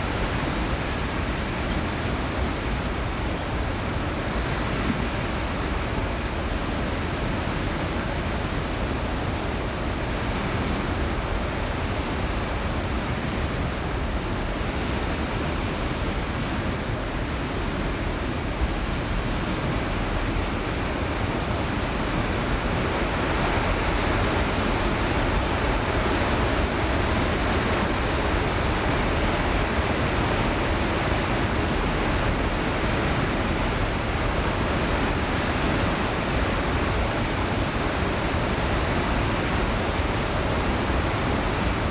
Hunsballevej, Struer, Danmark - Struer Beach heavy wind, distant sea.
Struer_Beach heavy wind, distant sea. Recorded with Rode NT-SF1 Ambisonic Microphone. Øivind Weingaarde.
Region Midtjylland, Danmark, 30 September, ~17:00